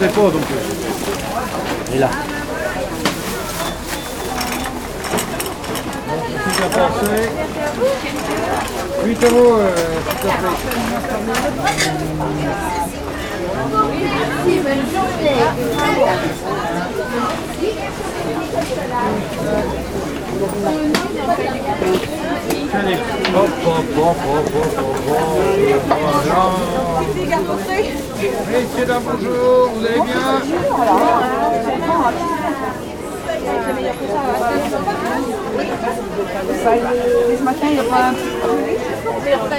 L'Aigle, France - Marché de l'Aigle 3
Ambiance au marché de l'Aigle, Zoom H6 et micros Neumann